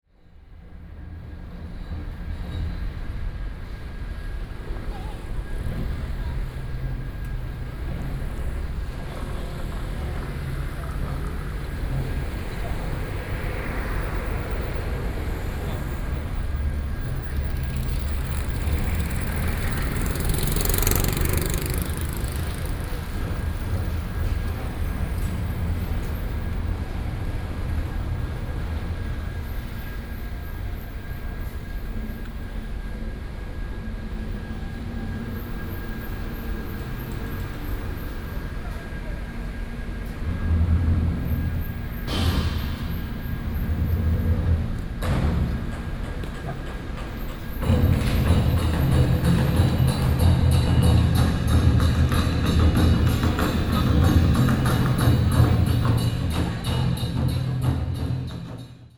Taipei, Taiwan - air conditioning noise
Xinyi District, Sōngzhì Rd, 75號B1樓松壽公園地下停車場, 2 November 2012, ~20:00